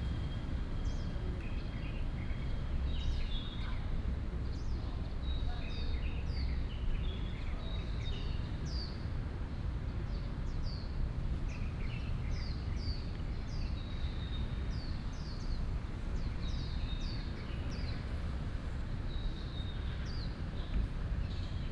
Yanji Park, Da’an Dist., Taipei City - in the Park
in the Park